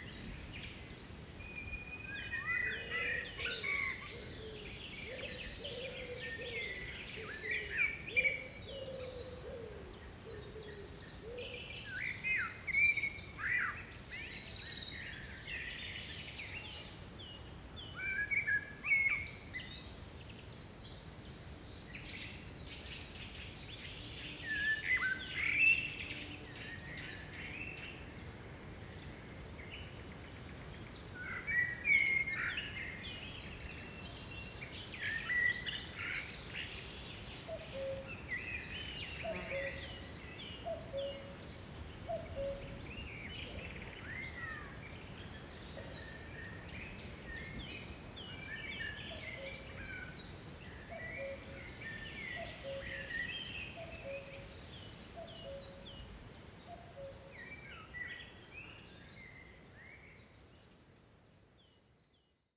[Hi-MD-recorder Sony MZ-NH900, Beyerdynamic MCE 82]